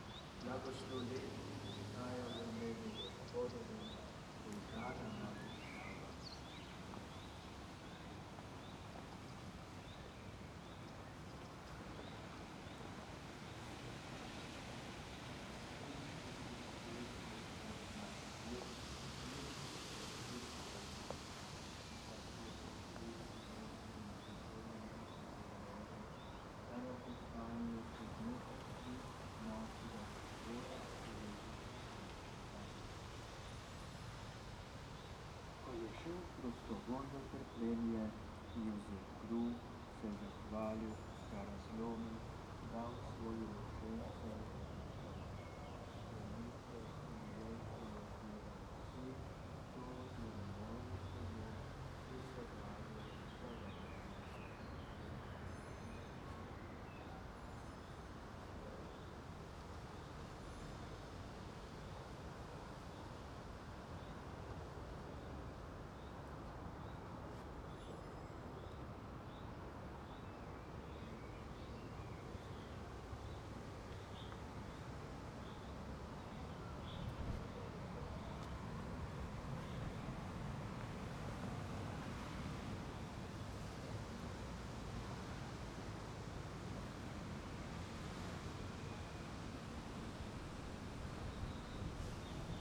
Kapela, Nova Gorica, Slovenija - Pridiga
Sermon.
Recorded with Sony PCM-M10